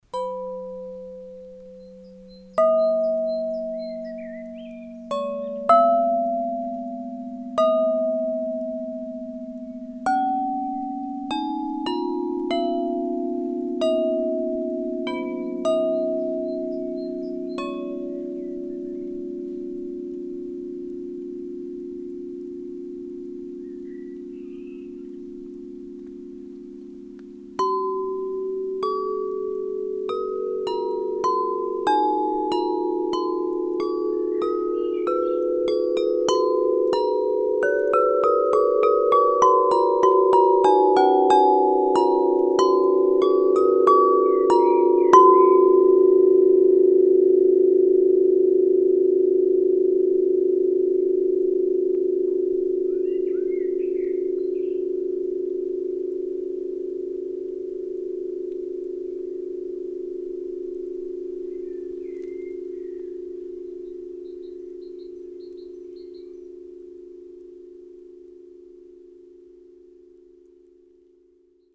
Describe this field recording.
On your way at Hoscheid Klangwanderweg - sentier sonore, in the forest you can find this sound object by Michael Bradke entitled Röhrenglockenreihe. Its an instrument consisting of a group of steel tube hanging in a wooden frame, that can be played with a rubber ball sticks. Hoscheid, Klangobjekt, Röhrenglocken, Auf dem Klangwanderweg von Hoscheid. Im Wald findest du dieses Klangobjekt von Michael Bradke mit dem Titel Röhrenglockenreihe. Es ist ein Instrument, das aus einer Gruppe von gestimmten Stahlröhren besteht, die in einem hölzernen Rahmen hängen und wird mit einem Gummistock gespielt. Mehr Informationen über den Klangwanderweg von Hoscheid finden Sie unter: Hoscheid, élément sonore, tuyaux sonnants, Cet objet de Michael Bradke intitulé les Tuyaux Sonnants se trouve sur le Sentier Sonore de Hoscheid, dans la forêt. C’est un instrument composé d’un groupe de tubes en acier suspendus dans un cadre en bois sur lequel on joue avec des petits marteaux en caoutchouc.